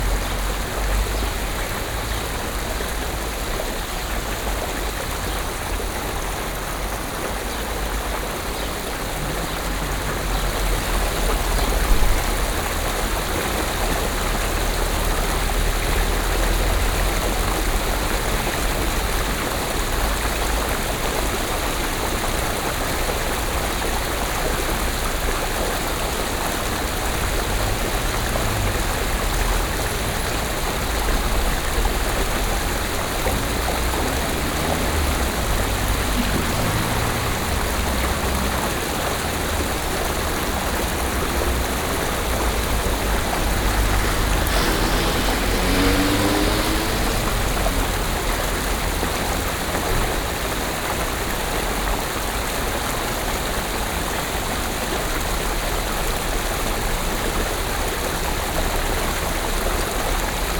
Montluel, Cours Condé, La Sereine